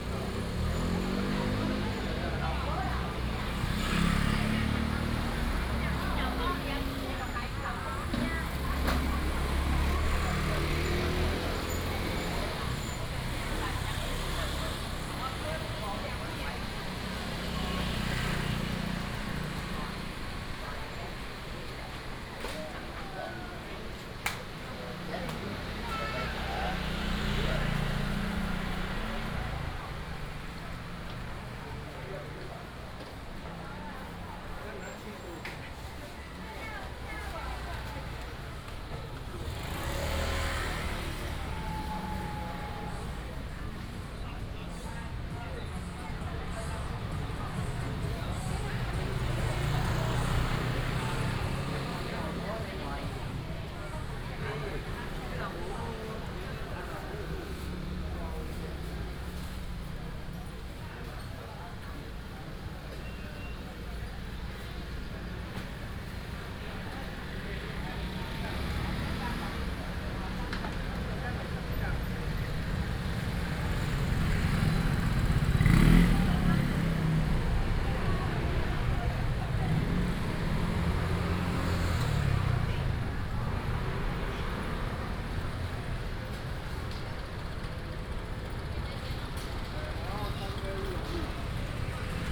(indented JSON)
{
  "title": "龍興市場, Banqiao Dist., New Taipei City - Old street market",
  "date": "2017-08-25 11:45:00",
  "description": "Walking through the Traditional Taiwanese Markets, Traffic sound, vendors peddling, Binaural recordings, Sony PCM D100+ Soundman OKM II",
  "latitude": "25.00",
  "longitude": "121.44",
  "altitude": "17",
  "timezone": "Asia/Taipei"
}